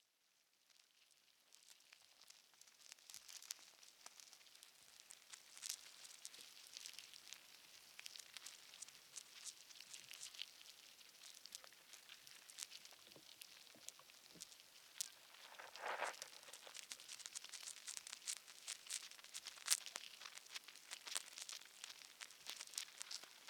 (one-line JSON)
{"title": "Lithuania, ants in sands", "date": "2011-08-05 18:40:00", "description": "small sandy anthill and little workers in it (recorded with contact microphones)", "latitude": "55.52", "longitude": "25.65", "altitude": "124", "timezone": "Europe/Vilnius"}